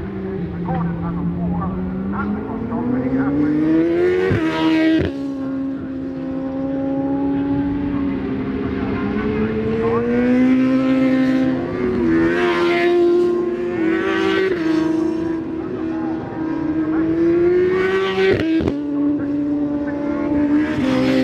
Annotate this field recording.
British Superbikes 2004 ... Qualifying ... part two ... Edwina's ... one point stereo to minidisk ...